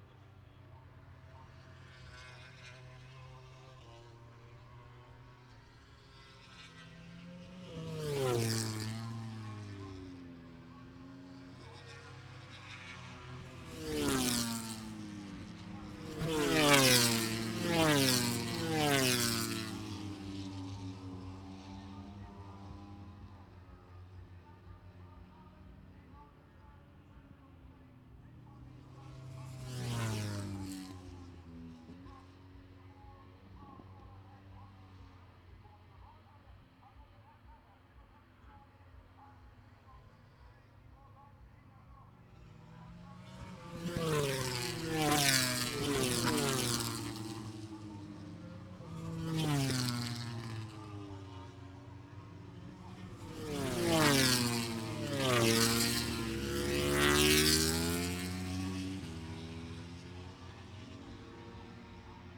Silverstone Circuit, Towcester, UK - british motorcycle grand prix 2019 ... moto grand prix ... fp2 contd ...
british motorcycle grand prix 2019 ... moto grand prix ... free practice two contd ... maggotts ... lavalier mics clipped to bag ...